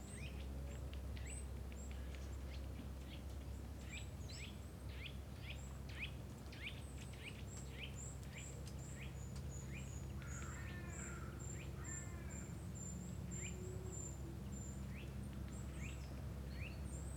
Obere Saale, Deutschland - Totenfels - Above Bleilochtalstausee
Totenfels - Above Bleilochtalstausee.
[Hi-MD-recorder Sony MZ-NH900, Beyerdynamic MCE 82]